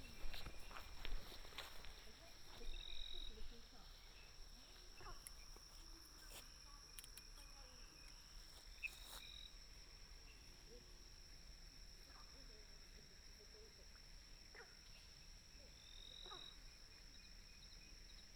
Night walk in the mountain, Frog sounds, Firefly
29 April, 20:29